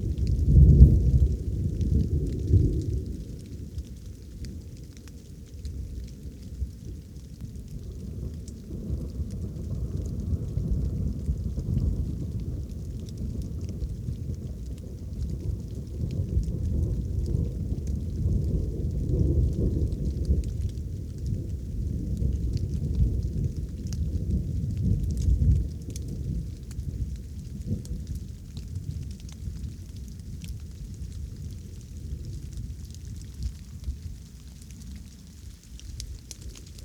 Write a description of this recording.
The highlands here in the Nature Park are an area for extreme weather conditions. There has been no rain here for weeks!! The water levels in the entire area are at their lowest water level in years! Forest fires have been raging for weeks and making the situation worse. All we can do is hope for a rainy autumn and a snowy winter. The climate crisis is hitting this area with full force for the sixth year in a row. Location: Nature Park Germany, August 2022, Setup: EarSight omni mic's stereo pair from Immersive Soundscapes, Rode Blimp, Audio-Technika ATH-M50x headphone, Ableton 11 suite, Filmora 10, Iphone 8plus